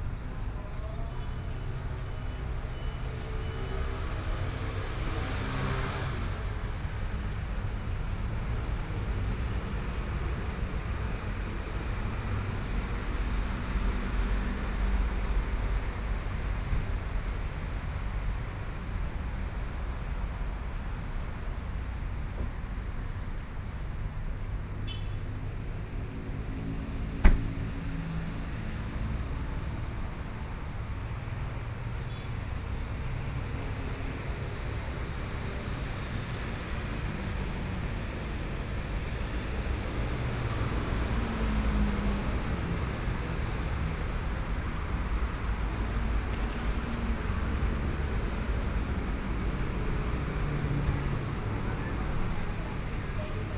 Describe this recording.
walking on the Road, Traffic Sound, To the east direction of travel, Sony PCM D50+ Soundman OKM II